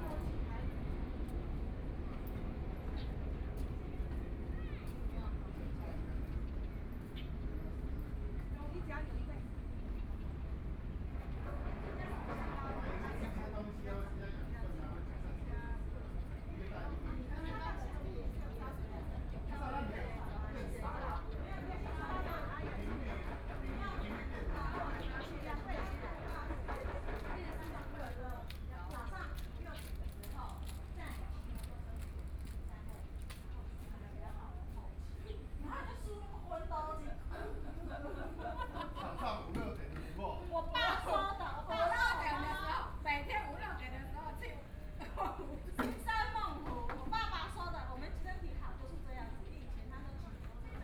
羅東林業文化園區, 羅東鎮信義里 - Tourist
in the Park, Birdsong sound, Tourist, Far from the construction site noise, Trains traveling through